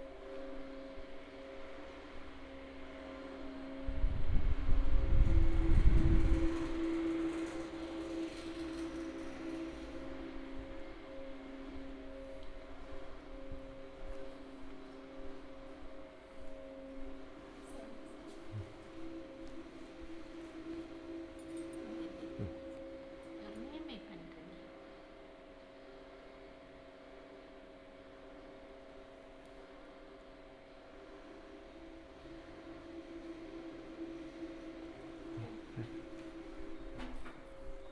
hampi museum - osscilating fans
recording of the sound of oscillating fans at the photo museum next to the police check in office - hampi, india - feb. 2008
karnatika, india, 19 February